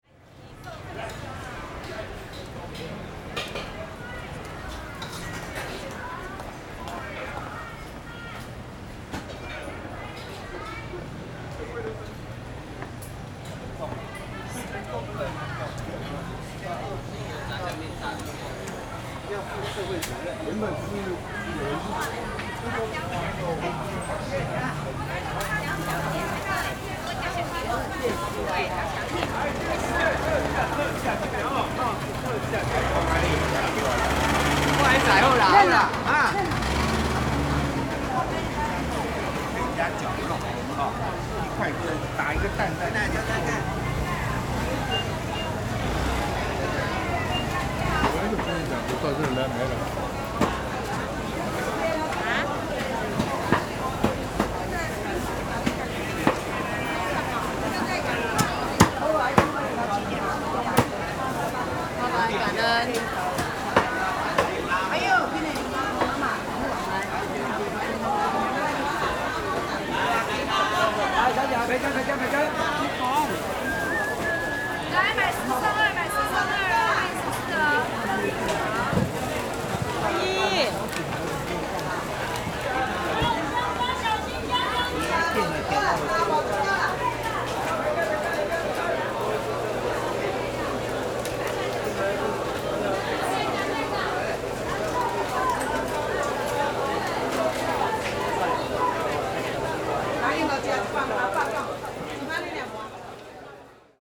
Ln., Minxiang St., Zhonghe Dist., New Taipei City - Walking in the traditional market
Walking in the traditional market, Traffic Sound
Zoom H4n